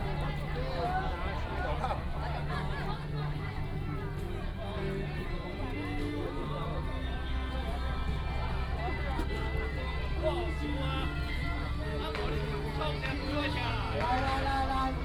褒忠鄉龍岩村, Yunlin County - at the corner of the road
Matsu Pilgrimage Procession, People are invited to take free food, At the corner of the road